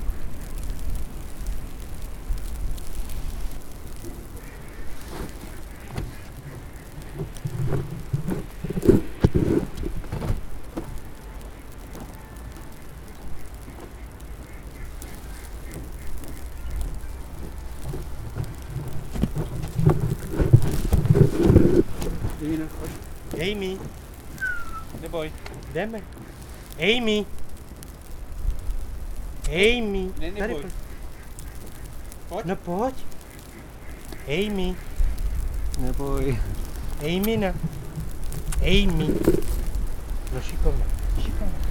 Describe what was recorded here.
Sparkling snowflakes. Like spinners and pins. You can hear the bells from Vyšehrad, waterfowl and dogs. People walking dogs are rushing. Bridges are full of stories. Last days in Prague are very white and magic.